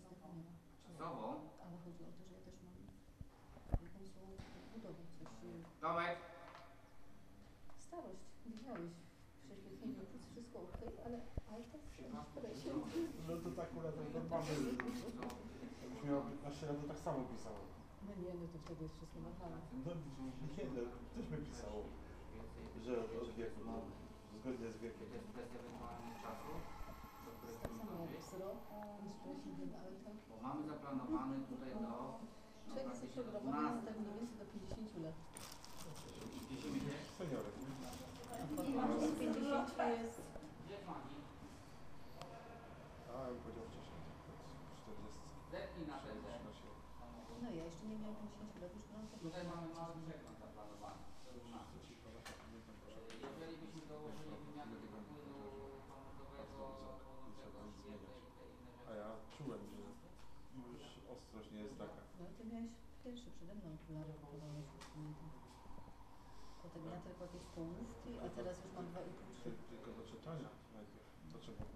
Słubice, Polen - at the garage
waiting to get to the counter at the garage, having to have our car fixed.
Slubice, Poland